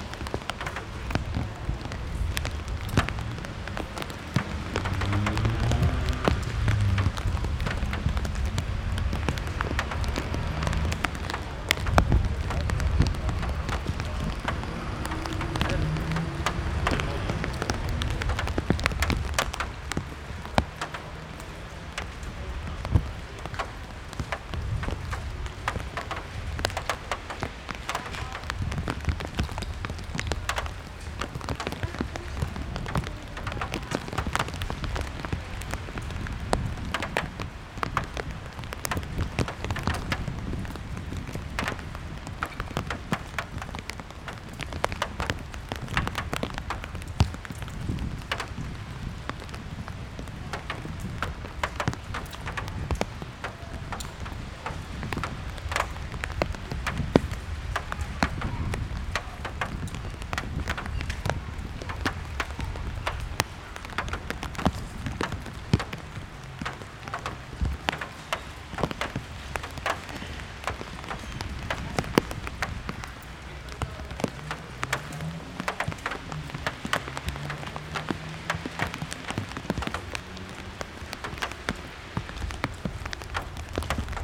raindrops, time, repetition, as a fire sound